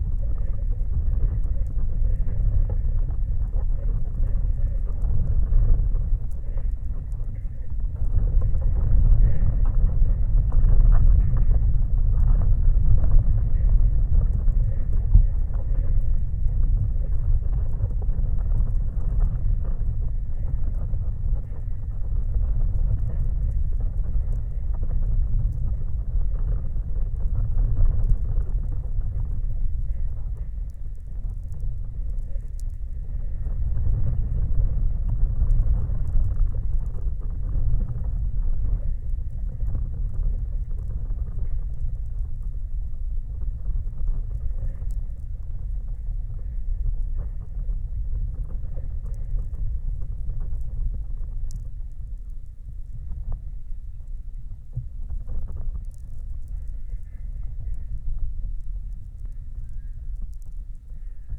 Antalgė, Lithuania, sculpture Time
Open air sculpture park in Antalge village. There is a large exposition of metal sculptures and instaliations. Now you can visit and listen art. Multichannel recording using geophone, contact mics, hydrophone and electromagnetic antenna Priezor